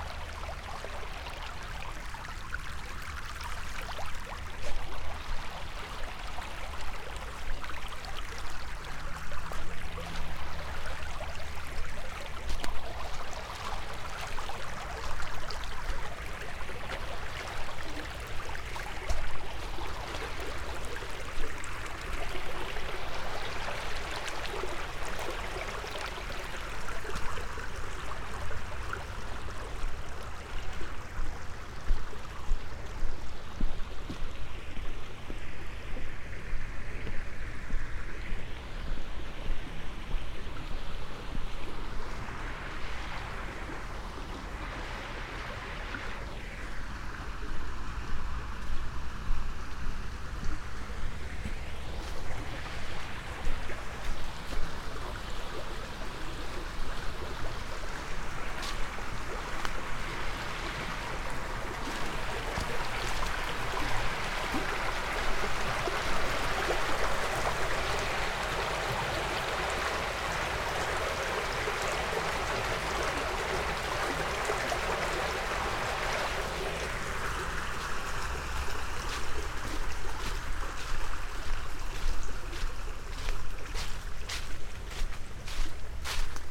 walk, park, maribor - flux, full moon
round walk in the city park near midnight with full moon rising, variety of fallen leaves, fluid ambiance with rivulets due to intense rainy day - part 1